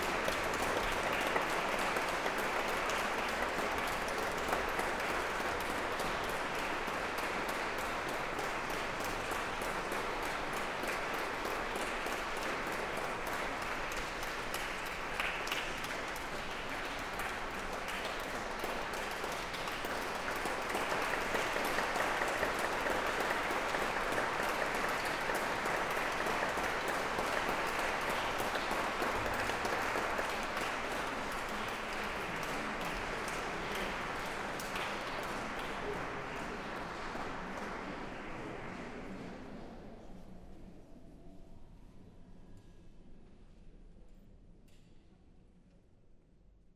Tours, France - Organ into the cathedral
Into the Saint-Gatien cathedral, an organist is playing organ. It's Didier Seutin, playing the Veni Creator op4 from Maurice Duruflé. This recording shows the organ is good, beyond the mass use. This organ was heavily degraded, it was renovated a few years ago.